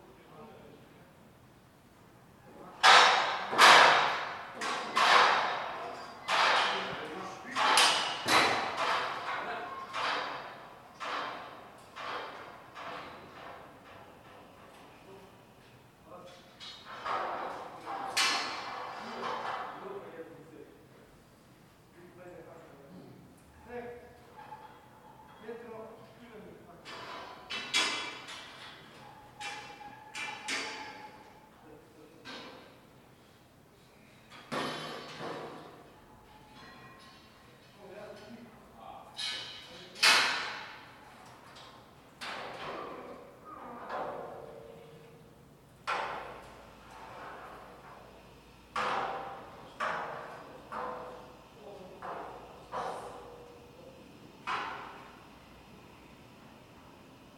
dismantling of scaffolding after renovating the Wolf-Dietrich-Mausoleum
Österreich, September 2021